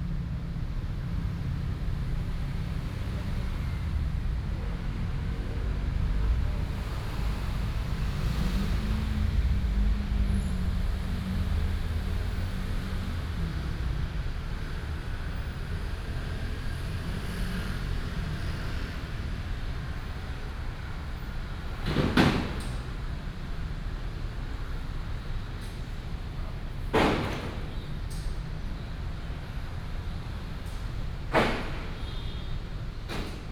in the Park, Very hot weather, Traffic noise
22 June 2015, ~15:00